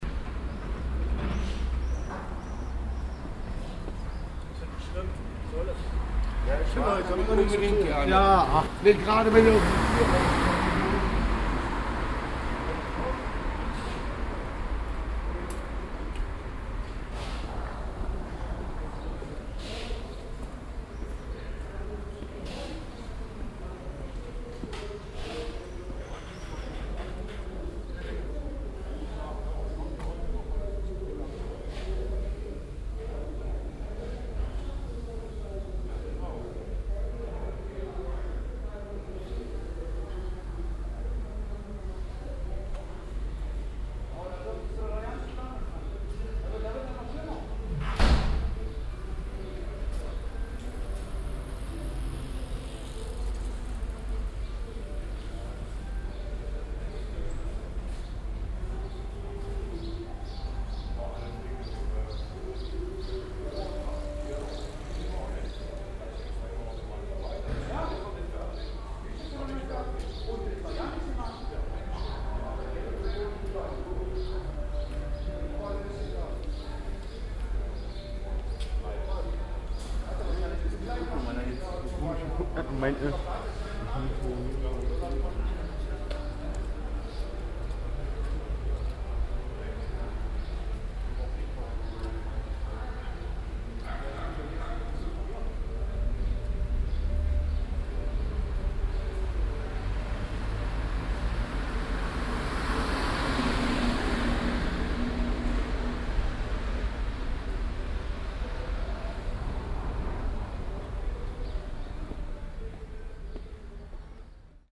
cologne, south, annostr, johanneshaus
soundmap: cologne/ nrw
obdachlose und bauarbeiten vor dem johanneshaus, köln annostrasse, morgens
project: social ambiences/ listen to the people - in & outdoor nearfield recordings